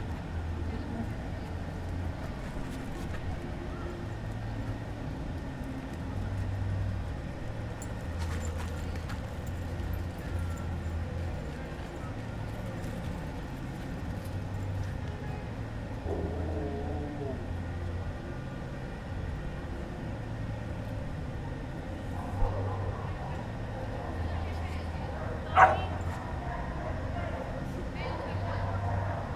Cra., Medellín, Belén, Medellín, Antioquia, Colombia - Parque Perros
Se escuchan murmullos de personas, hay diferentes sonidos de perros, a lo lejos y de cerca, se escuchan tambien cadenas y el trotar de los animales, también se escucha el ruido de un motor y del aire. Se siente tambien una música a lo lejos. Tambien se escucha un avión a lo lejos.